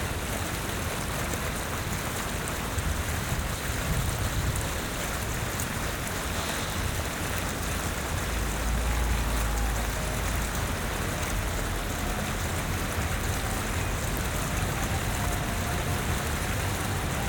Palais de la Dynastie, Kunstberg, Brussel, Belgique - Fountain
Trams and cars nearby.
Tech Note : Ambeo Smart Headset binaural → iPhone, listen with headphones.